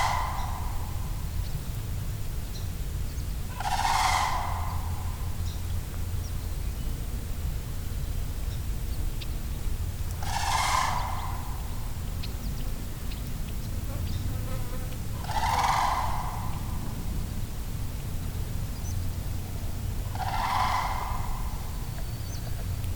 We kept our distance as we followed the cranes to the other side of the field. It was great to hear how the surrounding trees created a natural auditorium sound for this sandhill crane's call.
WLD, Grass Lake Sanctuary, phonography, field recording, birds, sandhill crane, Tom Mansell